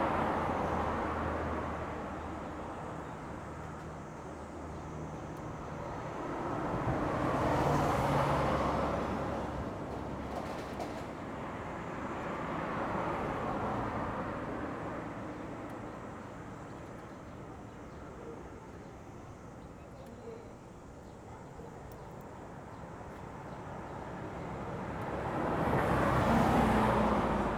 博愛里, Chenggong Township - the bus stop
The weather is very hot, Next to the bus stop, Traffic Sound, Small village
Zoom H2n MS +XY